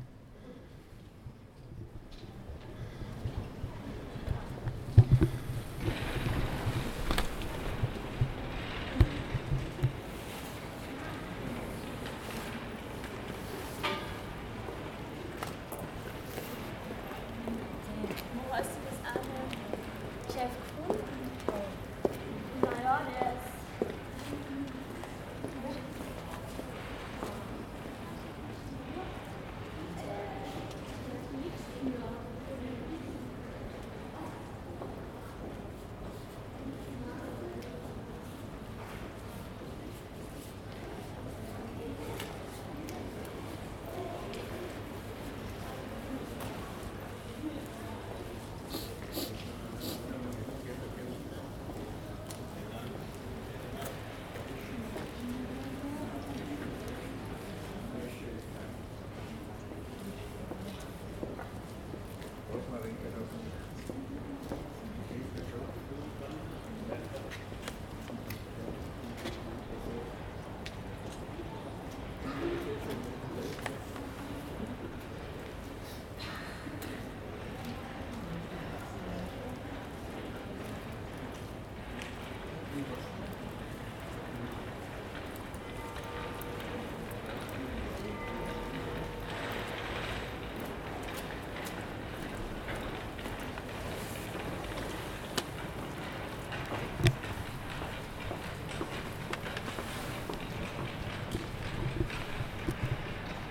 Der Mehlplatz liegt mitten in der Grazer Innenstadt zwischen Färberplatz und Glockenspielplatz. Es gibt mehrere Passagen zur Herrengasse, sowie zum Dom von Graz
Graz, Österreich, 8 January 2016